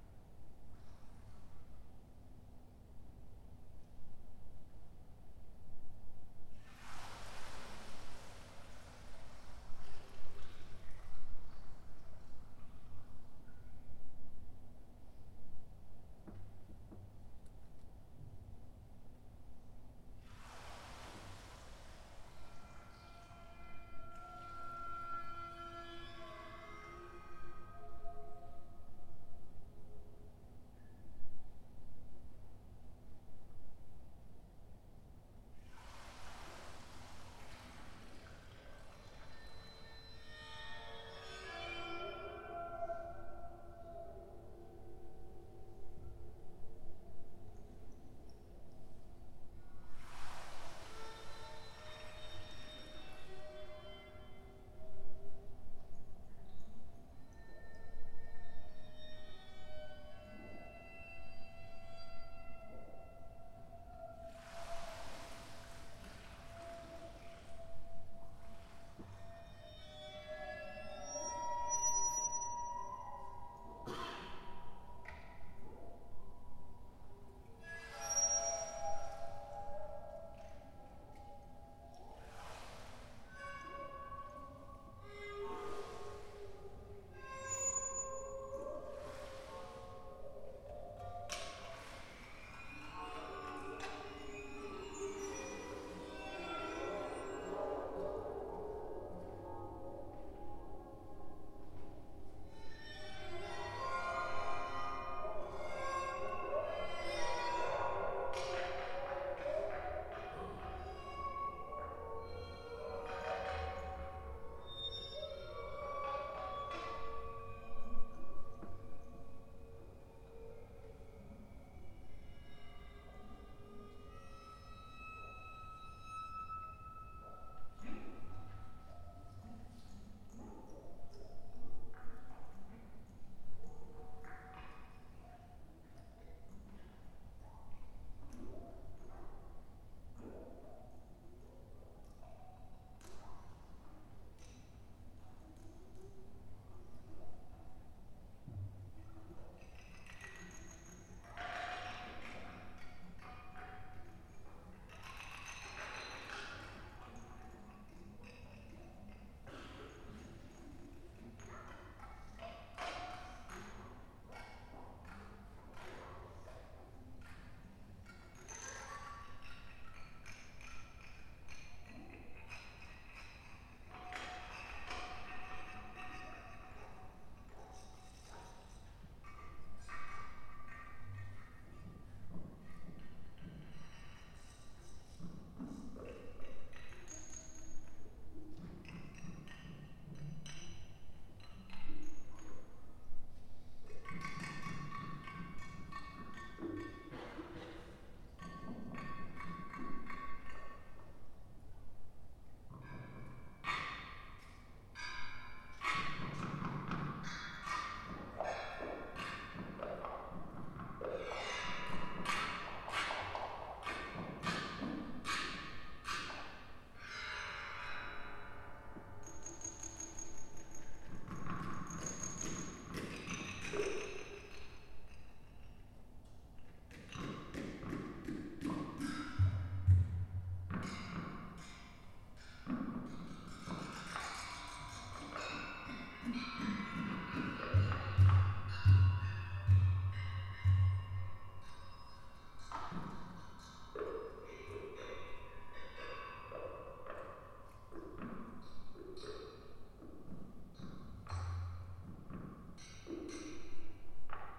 E Burlington St, Iowa City, IA, USA - Water Music for Percussion at U of Iowa Arts Share Concert
Water Music for Percussion performed at the Iowa Arts Share Concert. The piece was done by the four teaching staff - three TA's and the professor, using various objects to create noise from water. This was recorded using at Tascam DR MKIII.